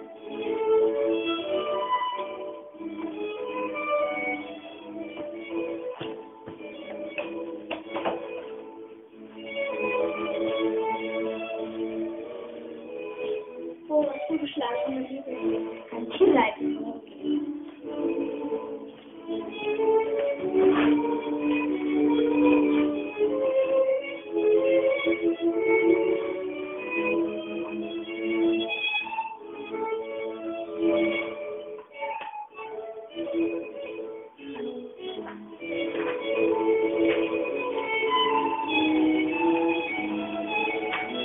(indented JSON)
{
  "title": "Popocatepetl - musikalische Freundinnen",
  "date": "2007-10-11 10:48:00",
  "description": "Lola and Toni are talking about music. Toni plays Pour Elise from Beethoven.",
  "latitude": "52.53",
  "longitude": "13.40",
  "altitude": "50",
  "timezone": "Europe/Berlin"
}